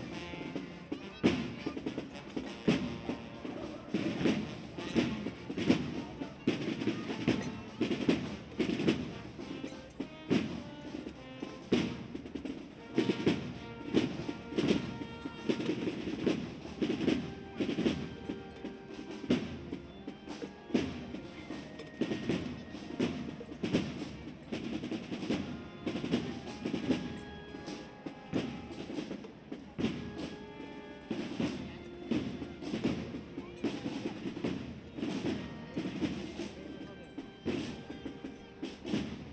Av. Esteiro, Ferrol, A Coruña, España - Jueves Santo
Procesión de la Pontifica, Real e Ilustre Cofradía de Nuestra Señora de las Angustias. During the Eaters, parishioners walk the streets in procession, dressed in tunics, carrying religious sculptures and playing pieces of music.